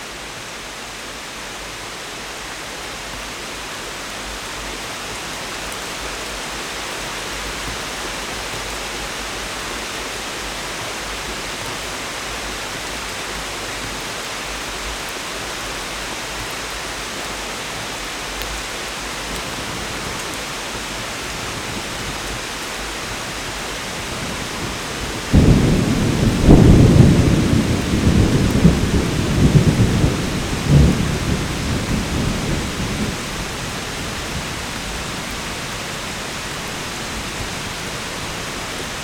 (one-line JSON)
{"title": "E Cherokee Dr Youngstown, Ohio - Summer Thunder", "date": "2020-07-11 09:00:00", "description": "I'm visiting my parents during the Covid-19 pandemic, sleeping in my childhood bedroom which has a porch off the back that faces Mill Creek Park. I loved listening to thunderstorms as a kid. So when one started, I set up my shotgun Mic and hit record. I believe I also caught the call of a Great Horned Owl and a bird I don't know.", "latitude": "41.08", "longitude": "-80.69", "altitude": "305", "timezone": "America/New_York"}